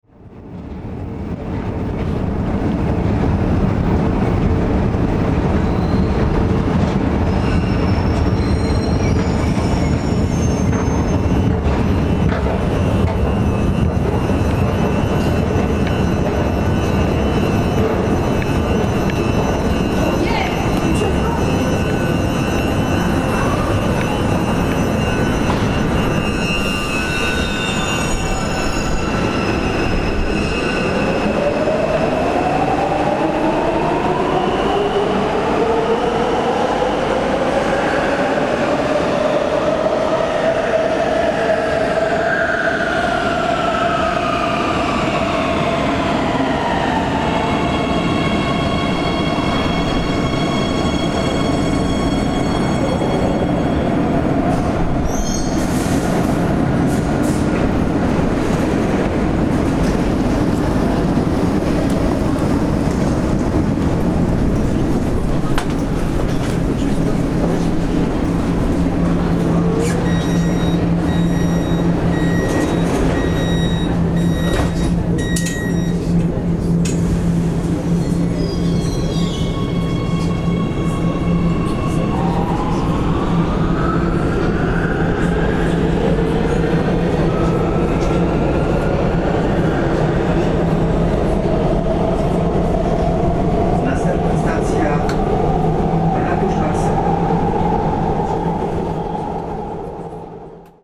Escalator, departing and arriving trains.
I've been walking from stairs to train.
Olympus LS-11
Warszawa, Poland, 6 December 2017